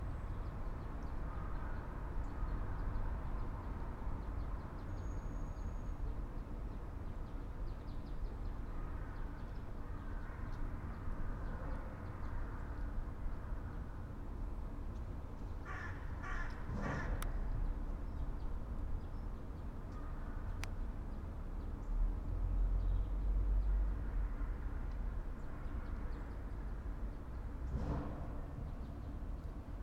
all the mornings of the ... - feb 3 2013 sun